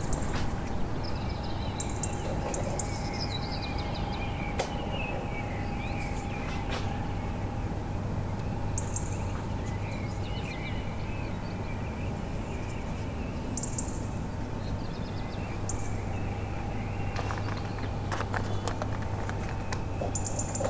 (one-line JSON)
{"title": "R. Actriz Palmira Bastos, Lisboa, Portugal - Spring will arrive, early bird activity (2)", "date": "2021-02-16 04:40:00", "description": "birds from the window, before sunrise\nsome machinery is heard also", "latitude": "38.75", "longitude": "-9.11", "altitude": "65", "timezone": "Europe/Lisbon"}